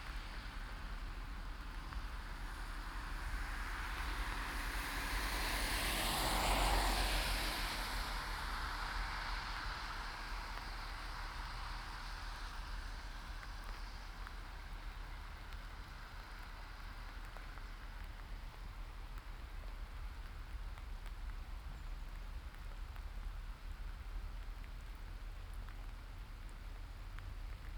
{"title": "berlin, schwarzer kanal, in front - berlin schwarzer kanal, in front", "date": "2011-08-04 16:19:00", "description": "traffic, binaural, recorded for the quEAR soundart festival 2011", "latitude": "52.48", "longitude": "13.46", "altitude": "37", "timezone": "Europe/Berlin"}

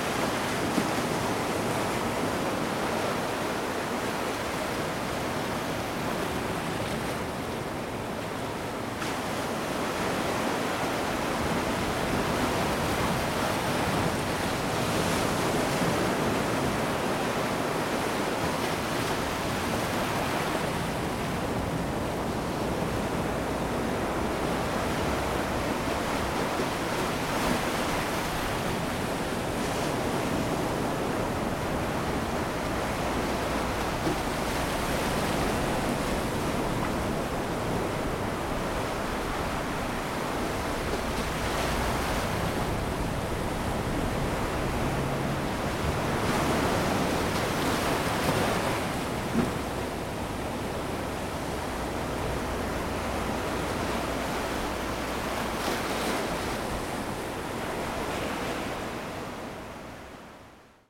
{"title": "Cortez Beach Pier, Bradenton Beach, Florida, USA - Cortez Beach Pier", "date": "2021-03-26 09:19:00", "description": "Recording from supports on side of pier of waves breaking on beach.", "latitude": "27.46", "longitude": "-82.70", "timezone": "America/New_York"}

